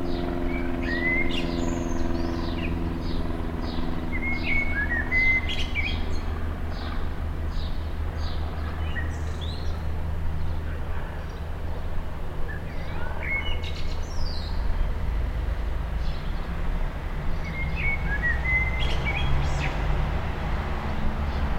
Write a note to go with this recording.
just a quiet place ? Captation : ZOOMH6